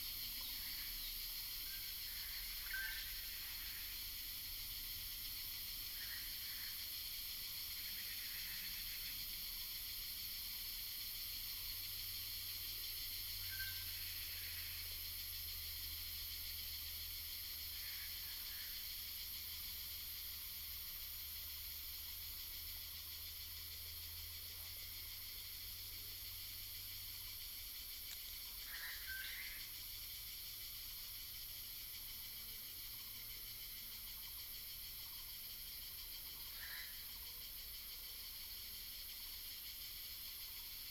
Hualong Ln., 魚池鄉五城村 - Cicadas cry and Bird calls
Birds singing, Cicadas cry, in the woods
Yuchi Township, 華龍巷43號, 26 April 2016, 07:32